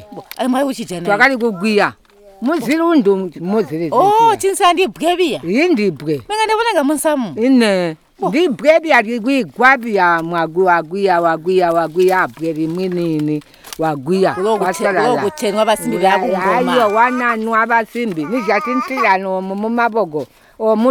Sikalenge, Binga, Zimbabwe - Our life as women of the Great River...
Lucia Munenge visits and interviews three old ladies who live together as they were married to the same husband. Ester Muleya describes the lives of women and girls, when the BaTonga were still living at the Zambezi river. She was a girl at the time of their forceful removal from the river by the colonial government in 1957. She describes the two farming season, the Batonga used to follow at the river and the staple crops they used to plant: Maize, pumpkin and Tonga beans near the river; Millet, Maize and Sorghum far away from the river when it was flooding during the rainy season. Ester mentions and recounts the process of purifying cooking oil from roasted and pounded pumpkin seeds; using either the powder directly in cooking or, boiling the powder in water to extract the oil. Ester describes the bead ornaments which the BaTonga women and girls used to be wearing - necklaces, bungles and earrings – especially when dancing and singing.
31 October 2016, 17:30